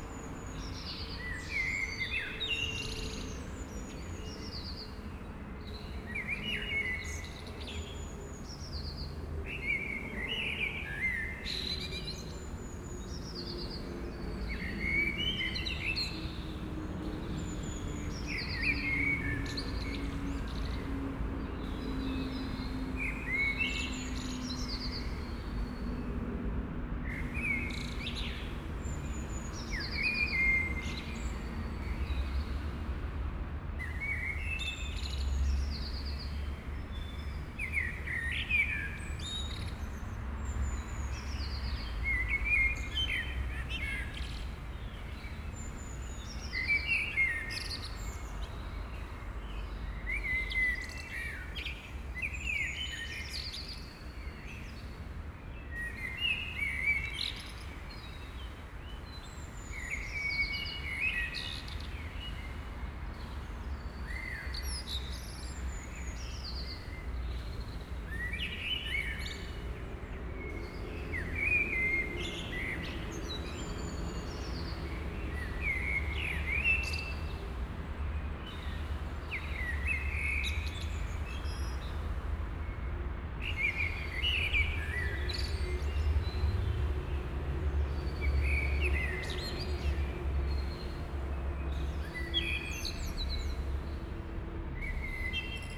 Südostviertel, Essen, Deutschland - essen, goebenstr 24, private garden
Inside a private garden at 6 a clock in the morning. The sounds of morning bords and the sounds from the street traffic of the nearby A40 highway.
In einem Privatgarten um 6 Uhr morgens. Der Klang der morgendlichen Vogelstimmen und der Klang des Verkehrs der naheliegenden Autobahn A40.
Projekt - Stadtklang//: Hörorte - topographic field recordings and social ambiences